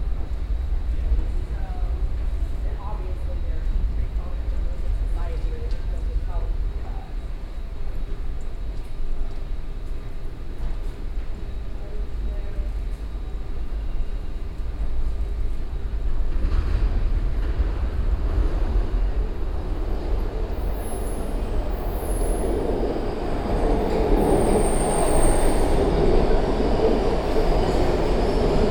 Washington DC, Farragut North Metro Station, Waiting
USA, Virginia, Washington DC, Metro, Train, Binaural
Washington, DC, USA, 16 November 2011